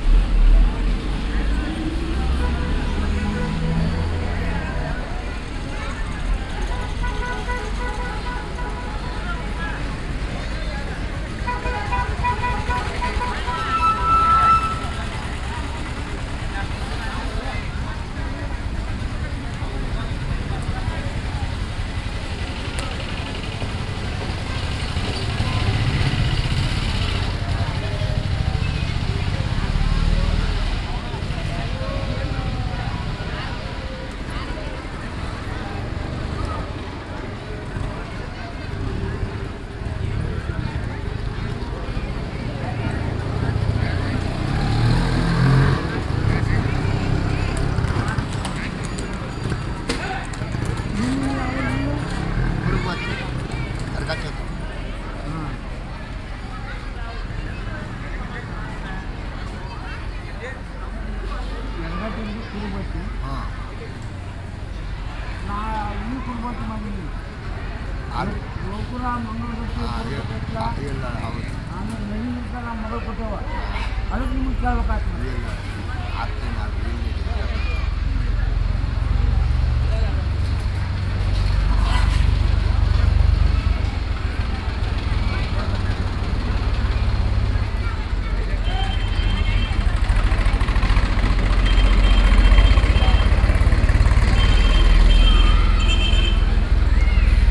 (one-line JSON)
{"title": "Saundatti, Near Khadi Kendra, Between temple and market", "date": "2011-02-19 19:12:00", "description": "India, Karnataka, Temple, Market, cow, Bell, Binaural", "latitude": "15.77", "longitude": "75.11", "altitude": "672", "timezone": "Asia/Kolkata"}